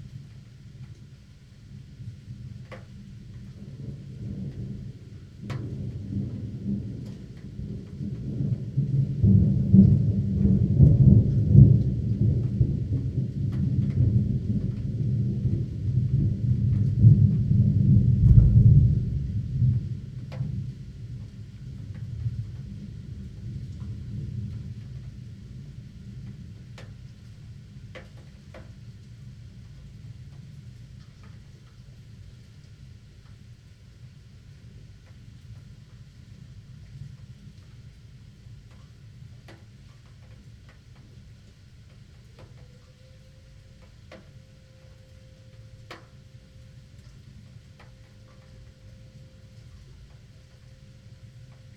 berlin, friedelstraße: backyard window - the city, the country & me: backyard window, thunderstorm
thunderstorm, rain, recorder inside of a double window
the city, the country & me: may 26, 2009
99 facets of rain